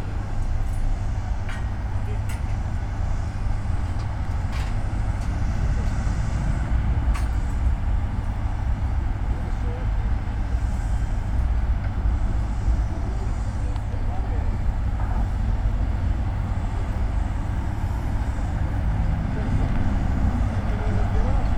Attention, loud noise. At the begging you can hear workers fixing high voltage lines over train tracks, talking and listening to the radio. They are working on a platform and the driver signals with a horn when they move to the next section. The tracks are located on a viaduct that is also under repair. Around 1:30 mark the worker starts sanding the base of the viaduct. Even though I was almost a hundred meters away, the sound of the sanding was deafening and drowning the heavy traffic moving below the viaduct. (roland r-07)
wielkopolskie, Polska, 24 August 2019, ~13:00